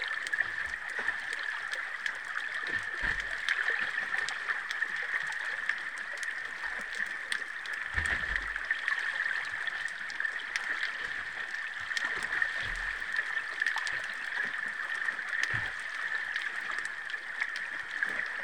Kos, Greece, hydrophone
hydrophones drowned in windy sea
13 April 2016, ~17:00